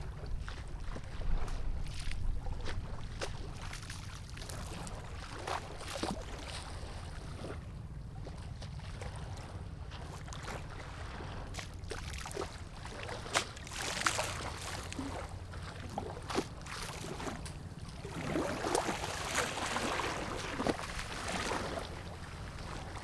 鎮海村, Baisha Township - On the bank
On the bank, Waves and tides, Aircraft flying through
Zoom H6 + Rode NT4
Penghu County, Baisha Township, 22 October 2014, ~10:00